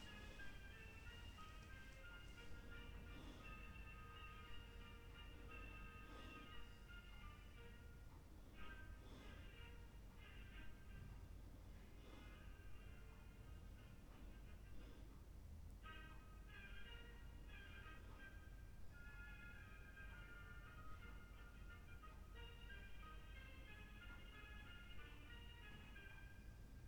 berlin, urban hospital - sleepless night
urban hospital, sleepless night, sound of a tiny radio, room neighbour's breath.
Berlin, Germany